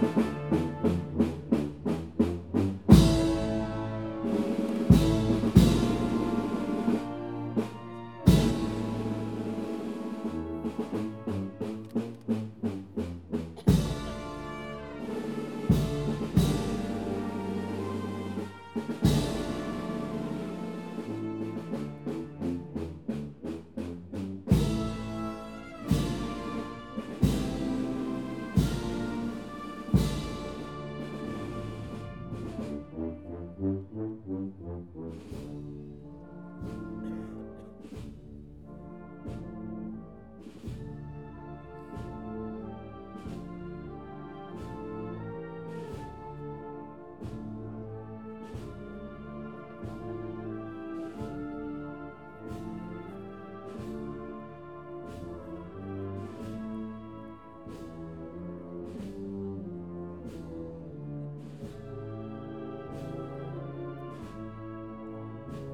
Plaza Molviedro, Sevilla, Spain - Semana Santa 2018 - Hermandad de la Soledad de San Buenaventura
Semana Santa 2018. Brotherhood - Hermandad de la Soledad de San Buenaventura. The recording start as the Paso carrying Mary enters the square and is set down in front of the open doors of Capilla del Mayor Dolor to say hello to the Pasos within. As well as the band at 4:24 you hear a woman serenade (sing a siete) the Paso from a balcony, a few seconds beofre that you hear a knock, which is the signal to set the Paso down. At 9:17 you hear a knock, this is the signal to get ready to lift the Paso, at 9:27 you hear them lift it, and then move on.
Recorder - Zoom H4N.